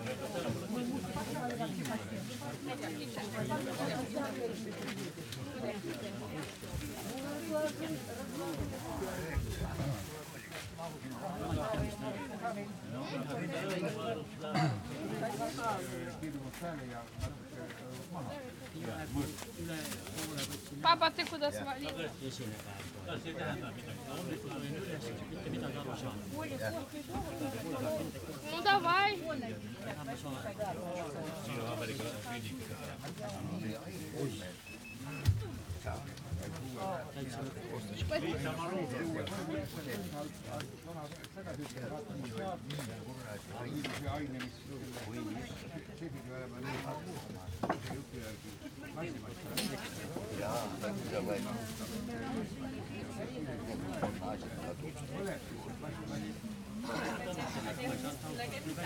Kallaste, Kreis Tartu, Estland - Kallaste, Estonia - Market
Kallaste, Estonia - Market.
[Hi-MD-recorder Sony MZ-NH900 with external microphone Beyerdynamic MCE 82]